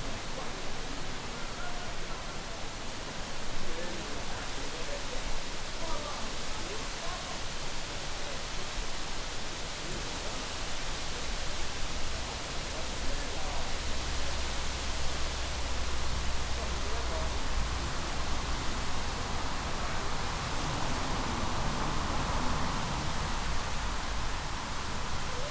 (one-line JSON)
{"title": "Ponte Spin' a Cavallu, Sartène, France - Ponte Spin", "date": "2022-07-26 16:00:00", "description": "wind in trees, dog, people, frog, road noise\nCaptation : ZOOM H6", "latitude": "41.66", "longitude": "8.98", "altitude": "25", "timezone": "Europe/Paris"}